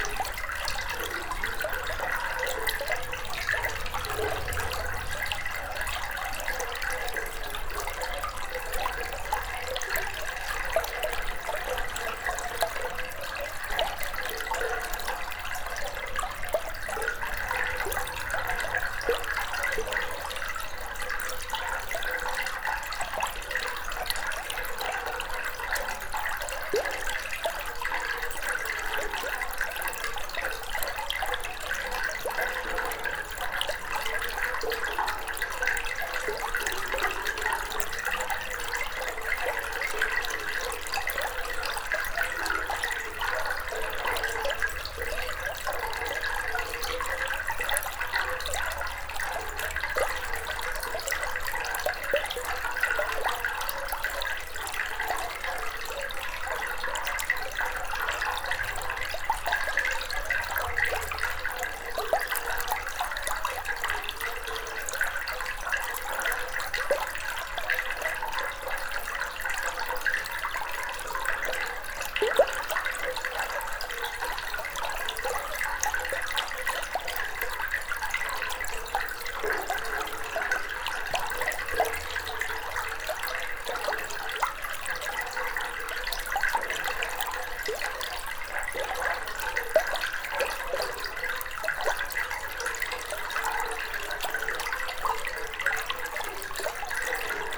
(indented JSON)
{
  "title": "Chambery, France - Train tunnel",
  "date": "2017-06-05 12:30:00",
  "description": "Into an underground mine train tunnel, water is quietly flowing.",
  "latitude": "45.56",
  "longitude": "5.90",
  "altitude": "291",
  "timezone": "Europe/Paris"
}